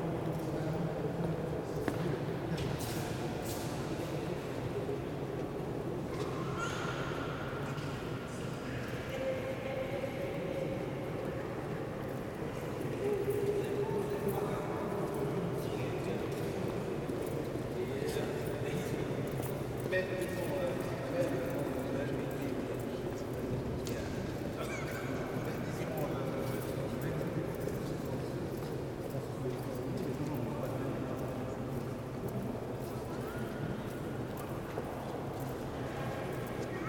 Brussels, Belgium - Listening in the Palais de Justice
Balcony inside the building, a nice listening situation. I simply placed 2 omni-directional Naiant X-X mics perhaps 2 feet apart on the balcony, and listened to how the peoples' footsteps - as they passed below - excited the resonances of the very echoey space.
België - Belgique - Belgien, European Union, 20 June